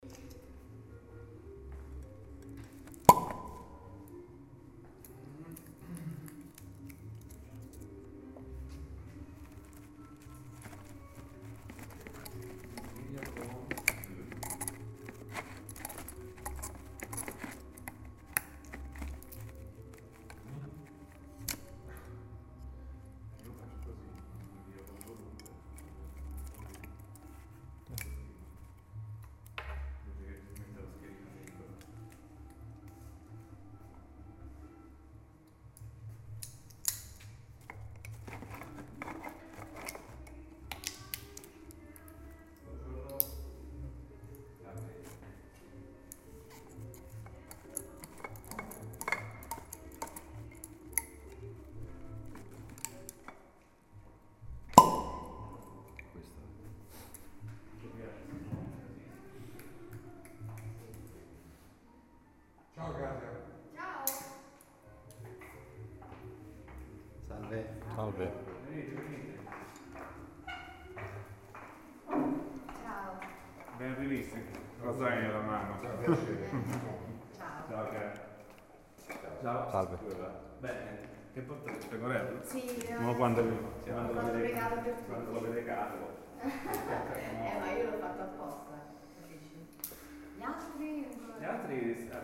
foodgallery-convivio 2
opening a wine bottle
#foodgallery
MAR, Italia